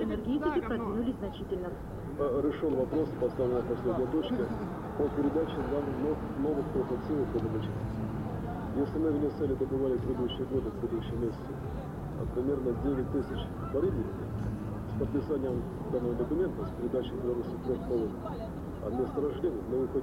minsk, october square, the screen